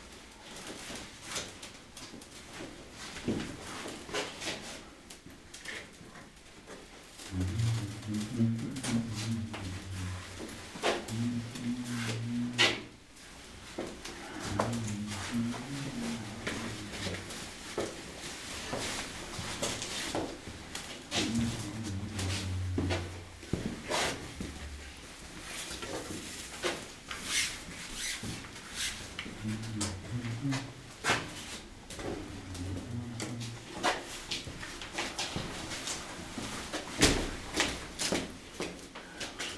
Vank, Arménie - Praying in the monastery
After a terrible storm, some farmers went on the top of this volcano. There's a monastery and they came to pray. This recording is the time they pray inside the church. As you can hear, there's no celebration. They simply light candles and say good words to the holy virgin. Their manner to pray is completely simple.
Armenia